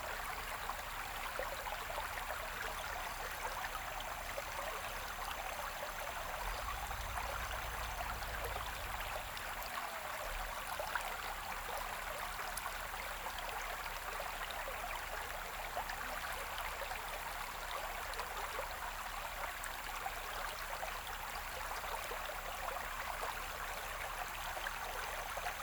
中路坑溪溼地, Puli Township - Stream
Stream, Flow
Zoom H2n MS+XY